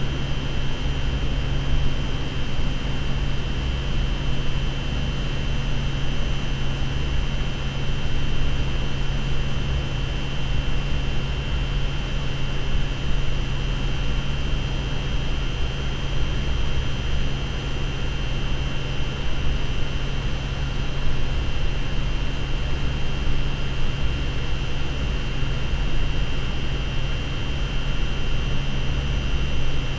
The long and haunting sound of a boiler room. The heaters produce warmth for a major part the university, so in fact, more than an half of the city.